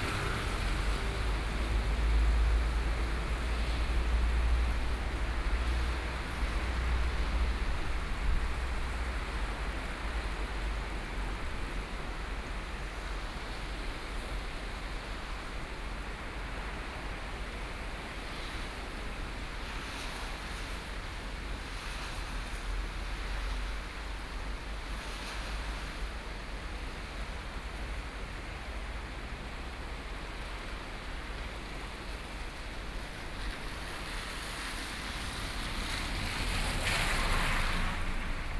宜蘭市小東里, Yilan County - Heavy rain
Traffic Sound, Next to the railway, Heavy rain, Trains traveling through
Sony PCM D50+ Soundman OKM II